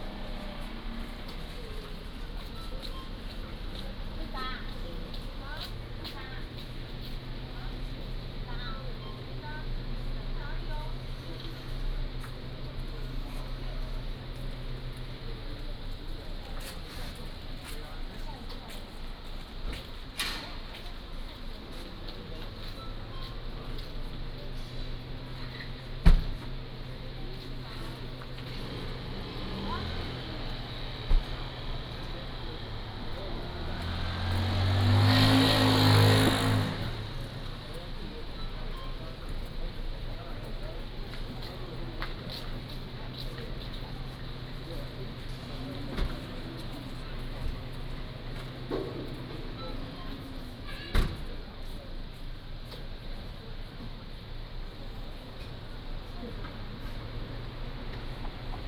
{
  "title": "介壽村, Nangan Township - In front of the convenience store",
  "date": "2014-10-14 16:03:00",
  "description": "In the Street, In front of the convenience store",
  "latitude": "26.16",
  "longitude": "119.95",
  "altitude": "9",
  "timezone": "Asia/Taipei"
}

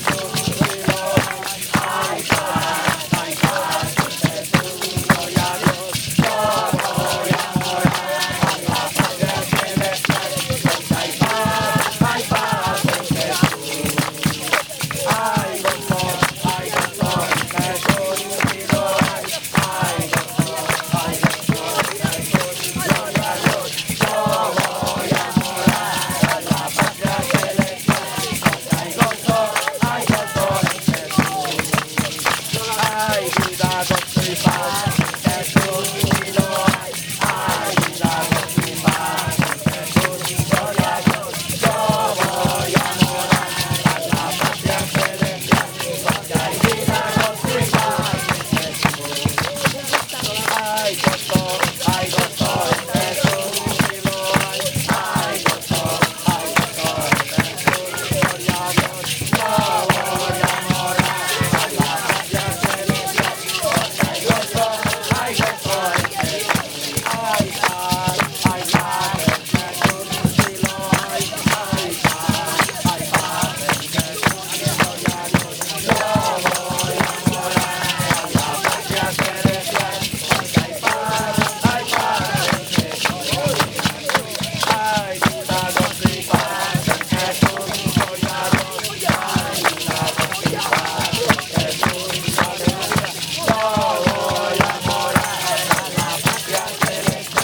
{
  "title": "Malecón Maldonado, Iquitos, Peru - youth with mission evangelise and sing another song.",
  "date": "2001-02-17 19:32:00",
  "description": "youth with mission evangelise and sing another song.",
  "latitude": "-3.75",
  "longitude": "-73.24",
  "altitude": "102",
  "timezone": "America/Lima"
}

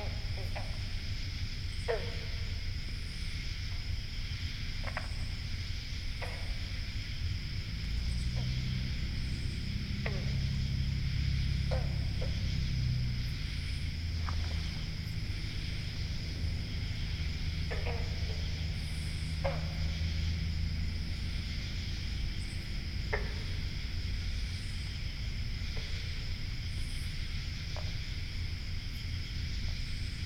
{
  "title": "Millville, NJ, USA - lost pond",
  "date": "2016-08-03 22:00:00",
  "description": "A friend and I fought through an hour's worth of briars and brush at night to access this isolated swamp situated in the center of a swamp. This late evening recording was surreal. Here are a few minutes of the 20 minute take. Our beautiful frogs make it work.",
  "latitude": "39.35",
  "longitude": "-75.09",
  "altitude": "21",
  "timezone": "America/New_York"
}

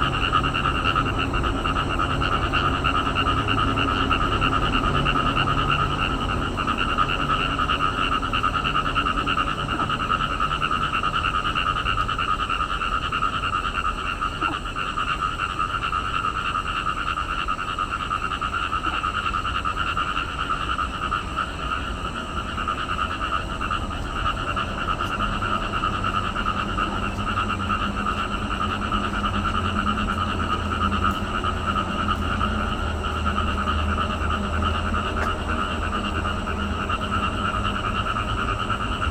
{
  "title": "zhuwei, Tamsui Dist., New Taipei City - Frog chirping",
  "date": "2012-04-19 19:45:00",
  "description": "Frog calls, garbage truck arrived, traffic sound\nSony PCM D50",
  "latitude": "25.14",
  "longitude": "121.46",
  "altitude": "4",
  "timezone": "Asia/Taipei"
}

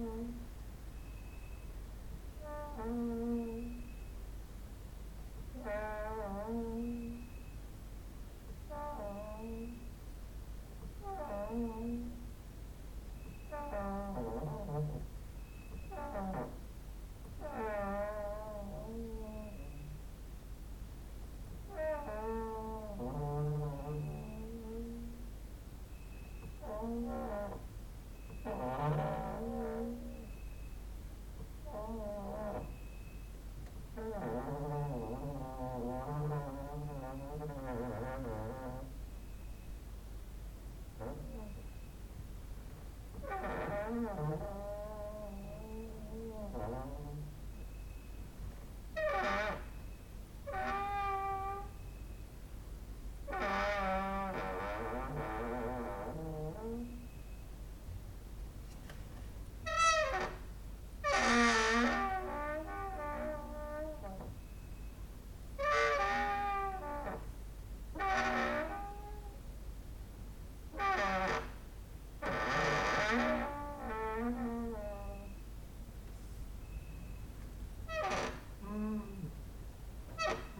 {
  "title": "Mladinska, Maribor, Slovenia - late night creaky lullaby for cricket/4",
  "date": "2012-08-10 00:20:00",
  "description": "cricket outside, exercising creaking with wooden doors inside",
  "latitude": "46.56",
  "longitude": "15.65",
  "altitude": "285",
  "timezone": "GMT+1"
}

